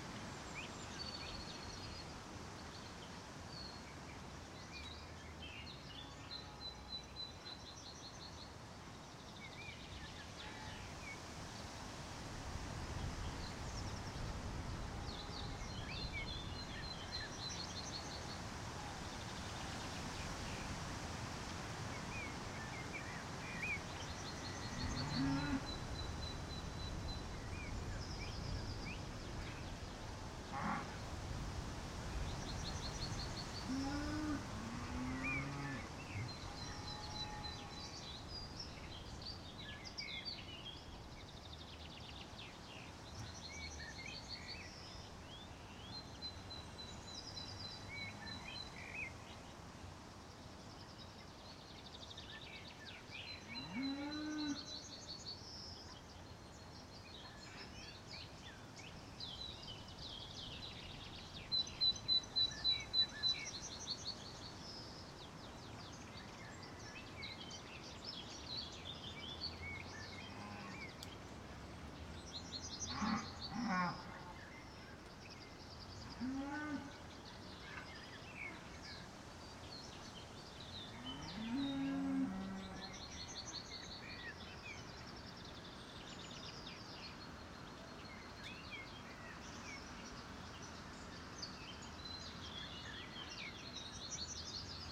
Piirimäe, Farm, Estonia - herd of cows in the early morning
With the green grass coming up quickly the nearby farm operation released their cows this week, introducing a new dynamic to the local soundscape.
Põlva maakond, Eesti, 16 May 2022